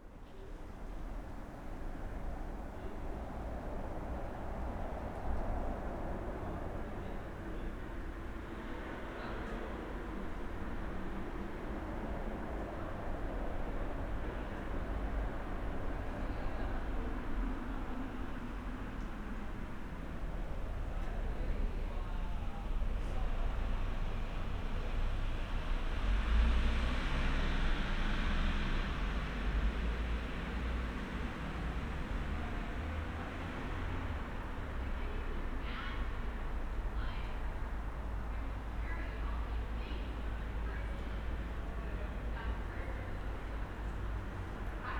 berlin: friedelstraße - the city, the country & me: night traffic
same procedure as every day
the city, the country & me: june 15, 2012